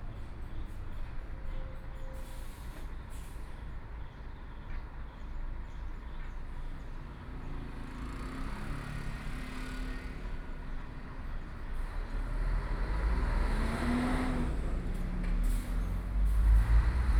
{"title": "內大溪路, 頭城鎮龜山里 - Railroad crossing", "date": "2014-07-21 17:27:00", "description": "Small alley, The sound of a train traveling through, Traffic Sound, Very hot weather\nSony PCM D50+ Soundman OKM II", "latitude": "24.94", "longitude": "121.89", "altitude": "12", "timezone": "Asia/Taipei"}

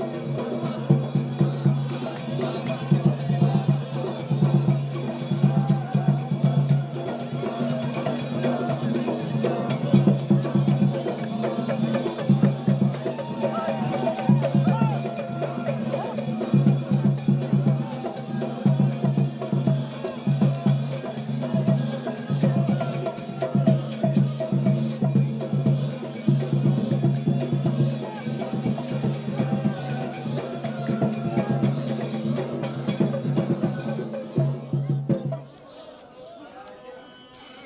{"title": "شارع الراشدين, Sudan - Dikhr in full swing @ tomb sheikh Hamad an-Neel", "date": "1987-05-08 16:30:00", "description": "dhikr. recorded with Marantz cassette recorder and 2 senheizer microphones", "latitude": "15.62", "longitude": "32.46", "altitude": "389", "timezone": "Africa/Khartoum"}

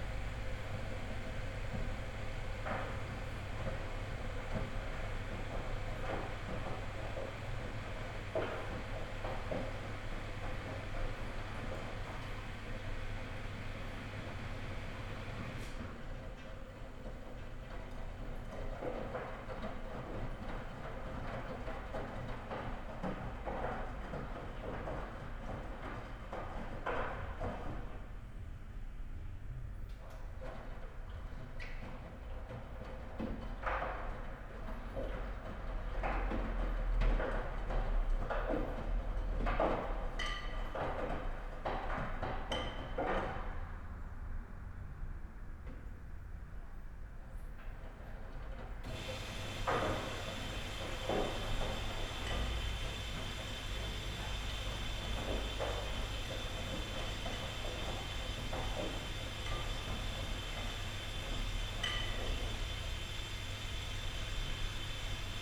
{"title": "berlin, ohlauer str., waschsalon - laundry ambience", "date": "2020-03-29 16:05:00", "description": "waiting for washing machine to finish, ideling... not a busy place today\n(Sony PCM D50, Primo EM172)", "latitude": "52.49", "longitude": "13.43", "altitude": "40", "timezone": "Europe/Berlin"}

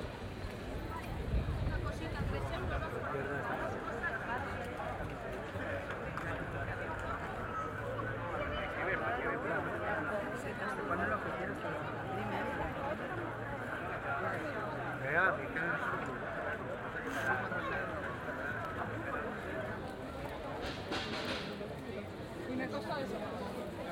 {"title": "Calle de Fray Ceferino González, Madrid, Spain - Rastro field recording", "date": "2010-06-13 21:30:00", "description": "Rastro Field recording ( Organillo included, typ. traditional tune)\nZoomh1+Soundman – OKM II Classic Studio Binaural", "latitude": "40.41", "longitude": "-3.71", "altitude": "630", "timezone": "GMT+1"}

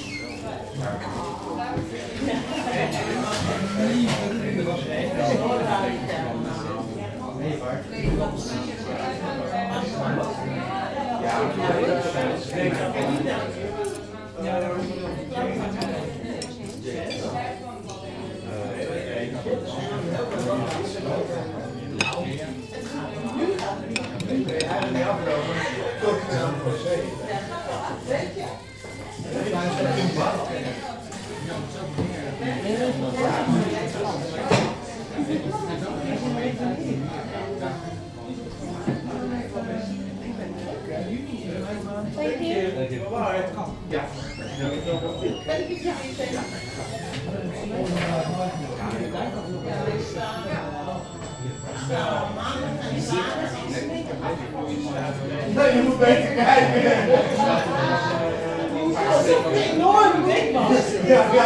{"title": "Westelijke Eilanden, Amsterdam, Nederland - Bar interior.", "date": "2013-04-15 18:45:00", "description": "Bar interior of Café de Oranjerie.\nRecorded with Zoom H2 internal mics.", "latitude": "52.38", "longitude": "4.89", "altitude": "5", "timezone": "Europe/Amsterdam"}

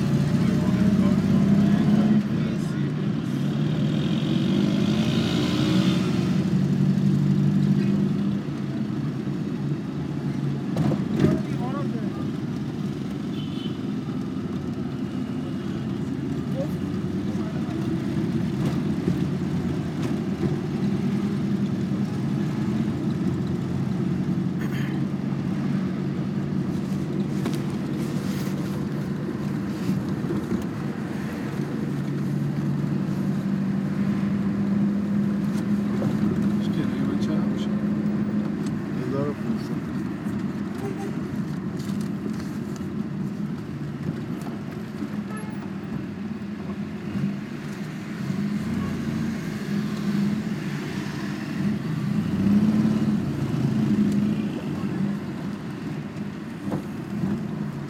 {"title": "Tehran Province, Tehran, Valiasr Square, Meydan-e Vali Asr Station, Iran - Inside a Taxi", "date": "2017-03-18 18:46:00", "latitude": "35.71", "longitude": "51.41", "altitude": "1237", "timezone": "Asia/Tehran"}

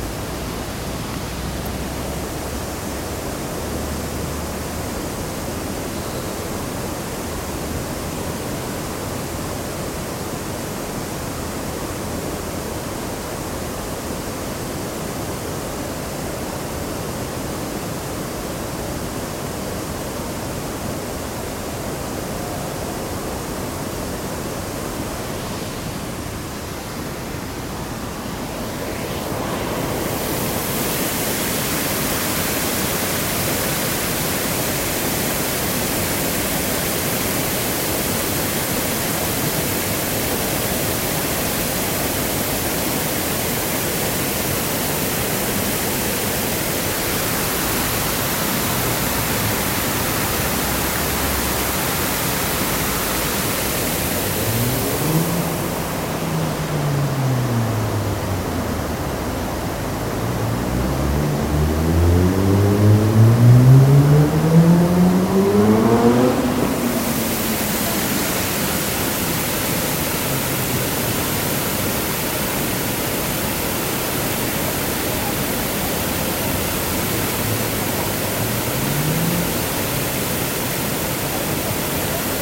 {"title": "lippstadt, friedrichschleuse", "description": "sluice at lippe-seitenkanal (a short canal in lippstadt).\nrecorded june 23rd, 2008.\nproject: \"hasenbrot - a private sound diary\"", "latitude": "51.68", "longitude": "8.34", "altitude": "76", "timezone": "GMT+1"}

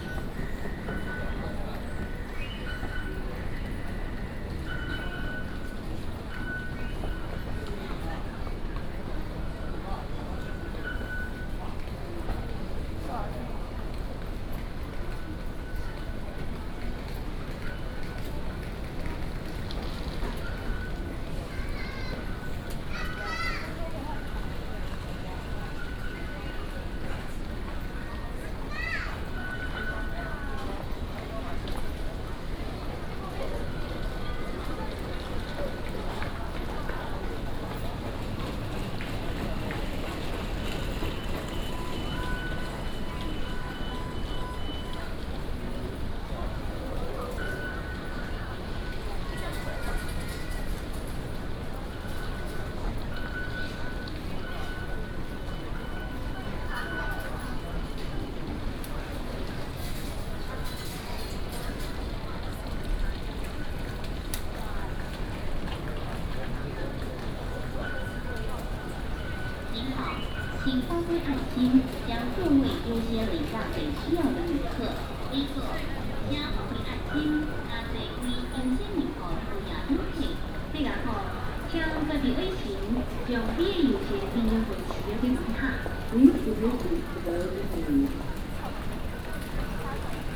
{"title": "Taipei Main Station, Taiwan - In the station lobby", "date": "2015-09-02 15:12:00", "description": "In the station lobby\nBinaural recordings, ( Proposal to turn up the volume )", "latitude": "25.05", "longitude": "121.52", "altitude": "12", "timezone": "Asia/Taipei"}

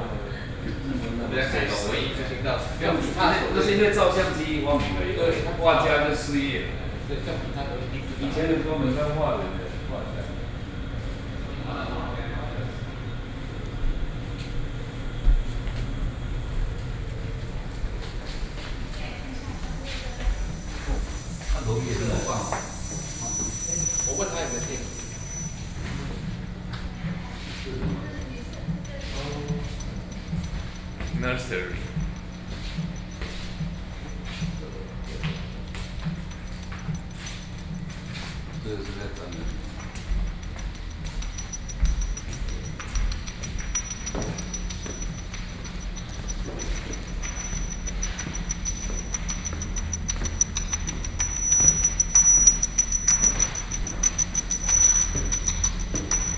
{"title": "Museum of Contemporary Art, Taipei - in the Museum", "date": "2012-09-30 13:47:00", "latitude": "25.05", "longitude": "121.52", "altitude": "11", "timezone": "Asia/Taipei"}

